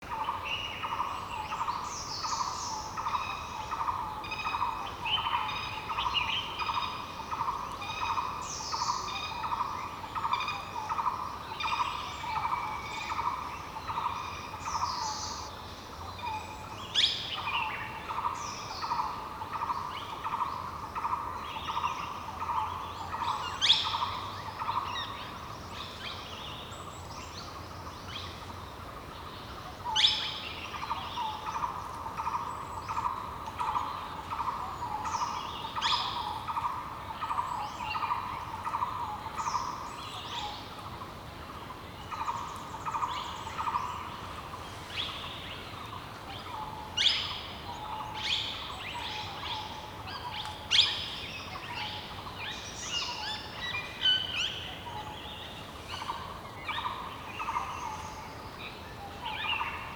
Field recording from the March-April 2009 field trip to the jungles of Corbett National Park, North India. Early morning ambience near camp
Uttarakhand, India, 31 March 2009